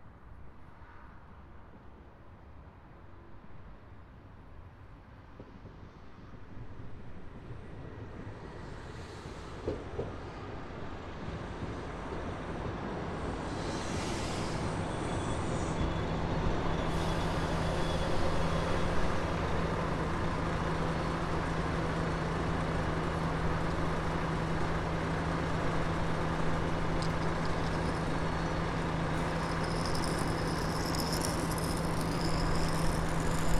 Train heading south on a windy Saturday morning. TASCAM DR-40X recorder on A-B setup.